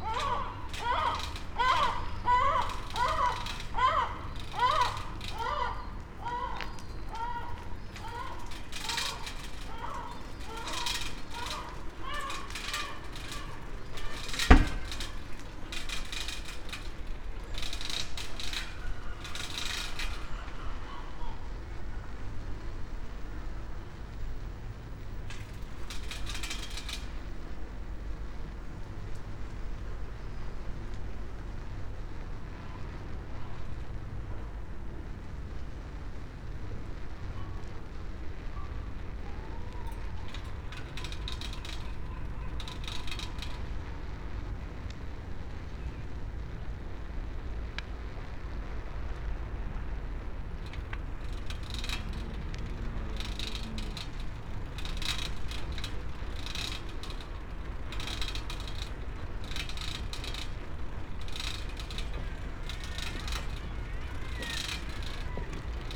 {"title": "Novigrad, Croatia - and again, and so on, at dawn ...", "date": "2014-07-18 04:44:00", "description": "the same circular path with bicycle, this year prolonged all the way to the fisherman boats harbour ... seagulls, waves, swimmers at dawn", "latitude": "45.32", "longitude": "13.56", "altitude": "5", "timezone": "Europe/Zagreb"}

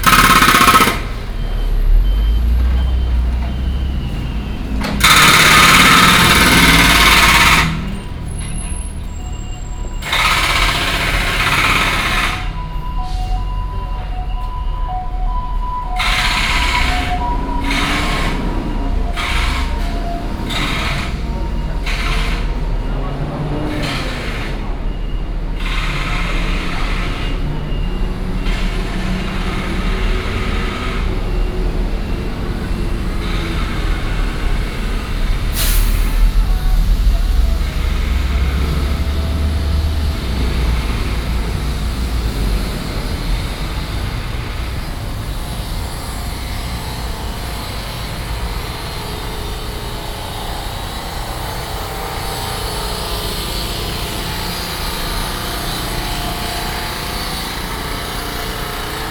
{"title": "Sec., Xinsheng S. Rd., Da’an Dist. - Road construction", "date": "2016-02-22 11:56:00", "description": "Road construction\nBinaural recordings\nSony PCM D100+ Soundman OKM II", "latitude": "25.02", "longitude": "121.53", "altitude": "21", "timezone": "Asia/Taipei"}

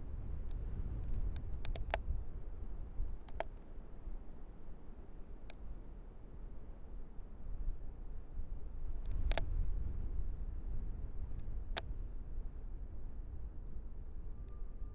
{"title": "Lithuania, Utena, freezing tree in wind", "date": "2012-12-15 20:20:00", "description": "close-up recording of freezing tree in a wind", "latitude": "55.51", "longitude": "25.59", "altitude": "107", "timezone": "Europe/Vilnius"}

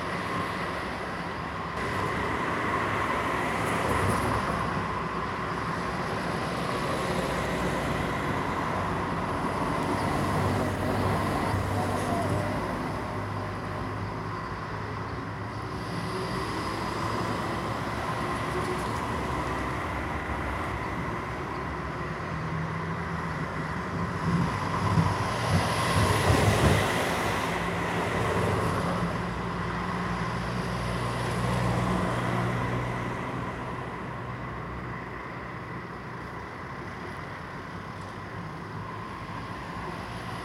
{"title": "City Gallery of Nova Gorica, Trg Edvarda Kardelja, Nova Gorica - traffic", "date": "2017-06-07 09:00:00", "latitude": "45.96", "longitude": "13.65", "altitude": "101", "timezone": "Europe/Ljubljana"}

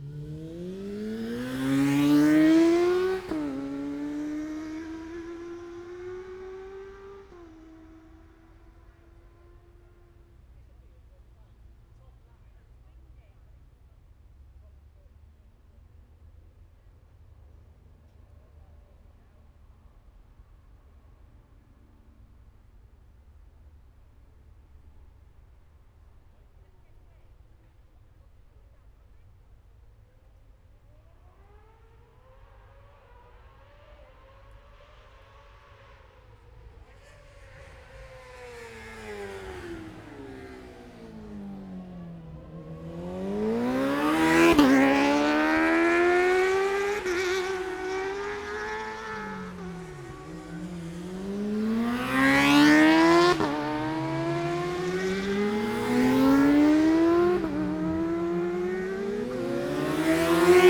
{"title": "Scarborough District, UK - Motorcycle Road Racing 2016 ... Gold Cup ...", "date": "2016-09-24 09:38:00", "description": "600cc evens practice ... Mere Hairpin ... Oliver's Mount ... Scarborough ... open lavalier mics clipped to baseball cap ... pseudo binaural ... sort of ...", "latitude": "54.26", "longitude": "-0.41", "altitude": "78", "timezone": "Europe/London"}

